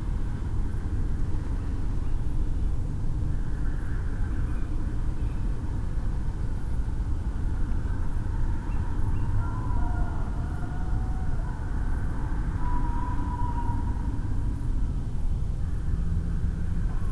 Sounds of the Night, Malvern Wells, UK
Sounds of distant traffic, owls, chickens, my faint snoring and an apple falling towards the end around 4am. From an overnight recording with the microphones on the roof of my house just below an open window in the tiles. The red map marker shows the supposed location of most of the sounds.